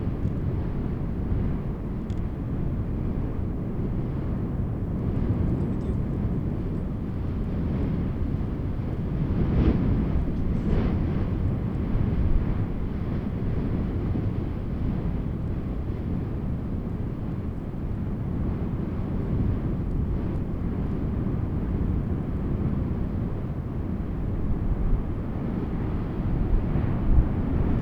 Malampa Province, Vanuatu - Lava on the rim of Benbow Volcano in Ambrym
Standing on the rim on Benbow Volcano on Ambrym with a Zoom H2N recorder watching the lava gushing violently below.